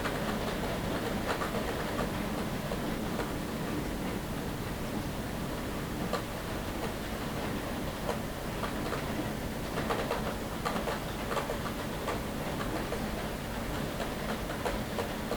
I woke up around four in the morning with the sound of the rain. I put my sound recorder on the night stand and I recorded the rain until it stopped.